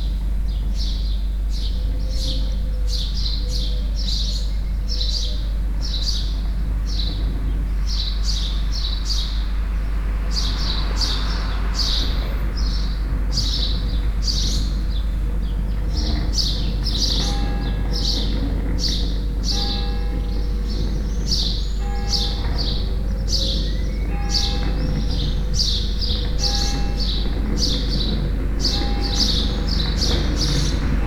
Cahors, Rue du Portail Alban.
Birds and Bells, a few cars.
12 June 2011, ~08:00